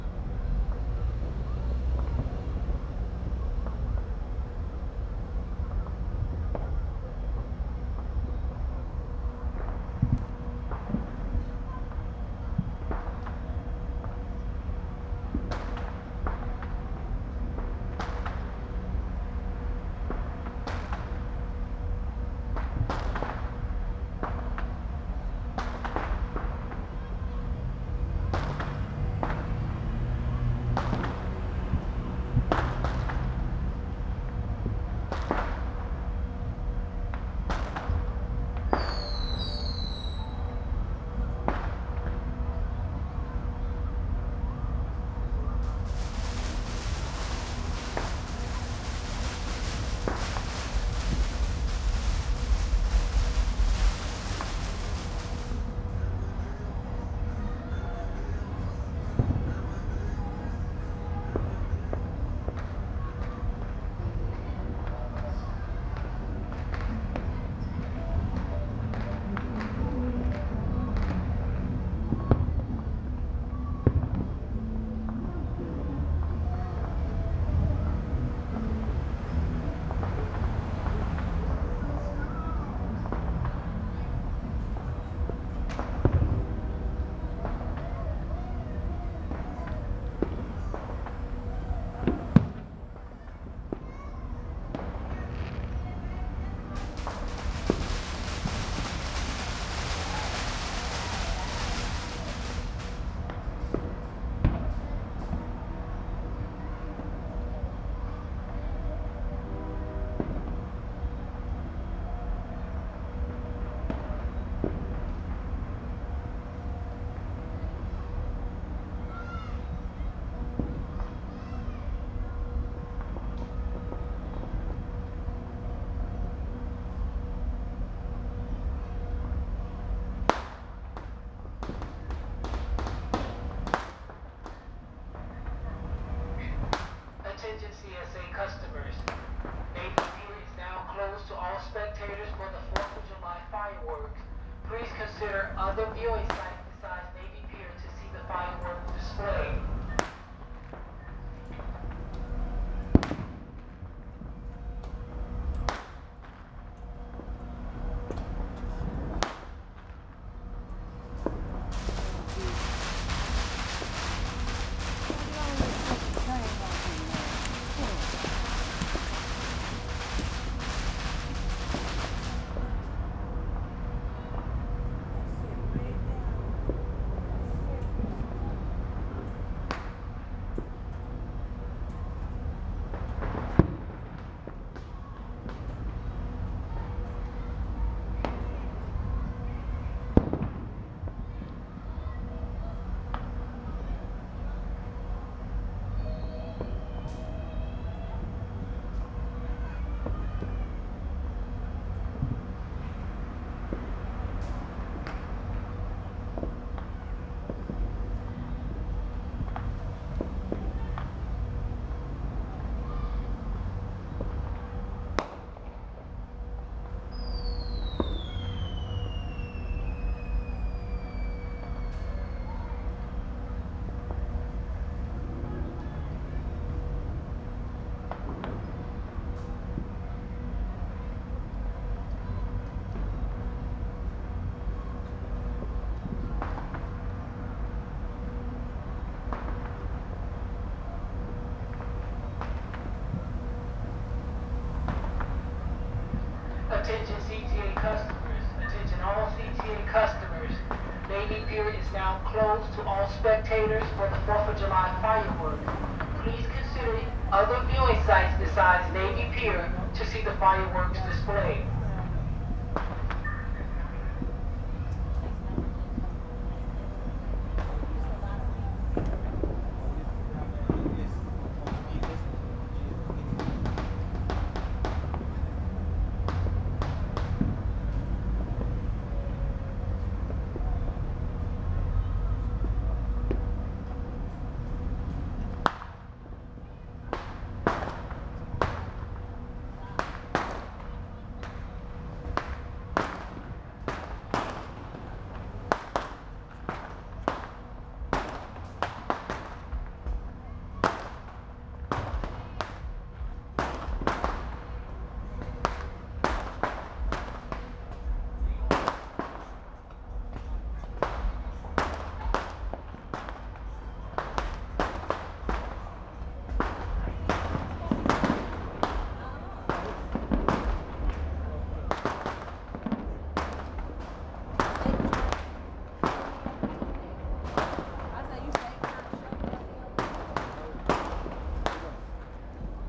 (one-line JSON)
{"title": "East Garfield Park, Chicago, IL, USA - fireworks green line - conservatory", "date": "2015-07-14 20:03:00", "description": "Recording of fireworks at the train stop. Recorded on sony pcm m10. Going home from gallery opening.", "latitude": "41.89", "longitude": "-87.72", "altitude": "185", "timezone": "America/Chicago"}